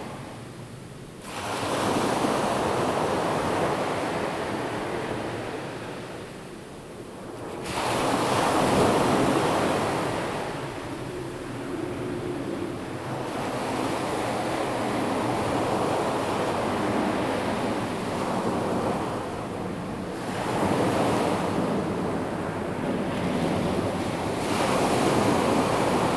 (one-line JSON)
{"title": "Qianshuiwan Bay, Sanzhi Dist., New Taipei City - Sound of the waves", "date": "2016-04-15 07:51:00", "description": "Big Wave, Sound of the waves\nZoom H2n MS+H6 XY", "latitude": "25.25", "longitude": "121.47", "altitude": "20", "timezone": "Asia/Taipei"}